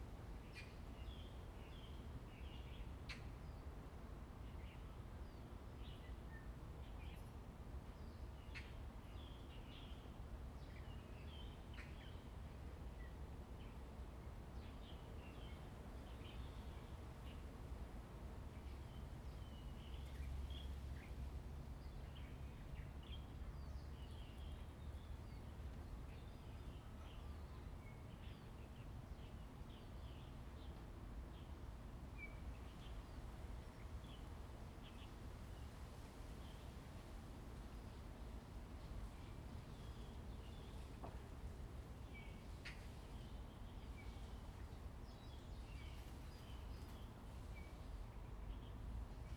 榕園, Jinhu Township - in the Park
in the Park, Birds singing, In the woods
Zoom H2n MS +XY